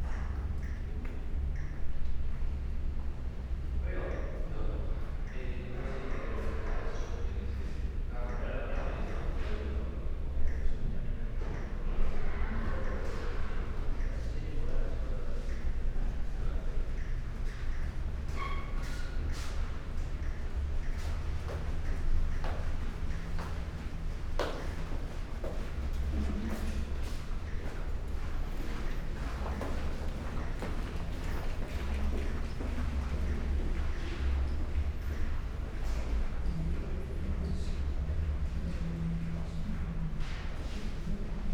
Ospedale Maggiore, Piazza dell'Ospitale, Trieste, Italy - corridor